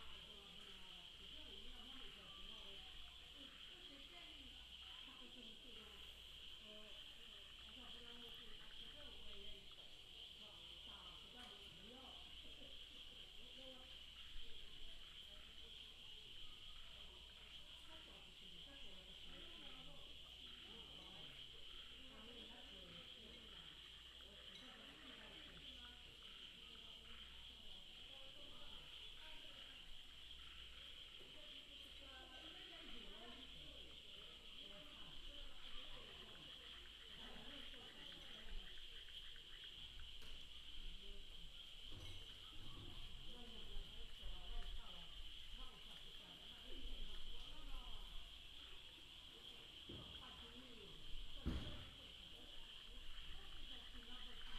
牡丹路222號, Mudan Township - In aboriginal tribal streets
Traffic sound, In aboriginal tribal streets, Insect cry, Frog croak
Pingtung County, Taiwan